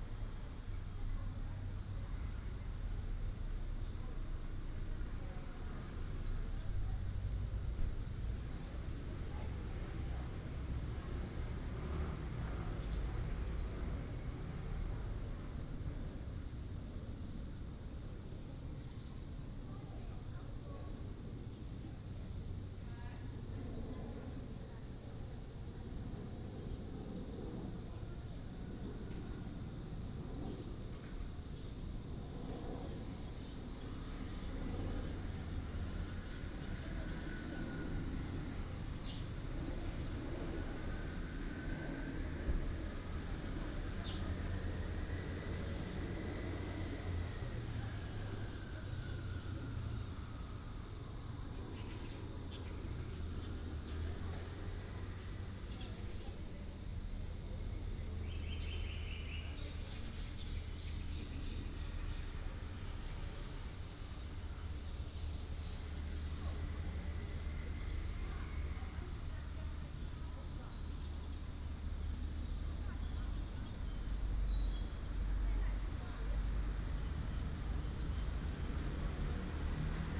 龍德公園, Daxi Dist. - small park
Small park, The plane flew through, traffic sound, birds sound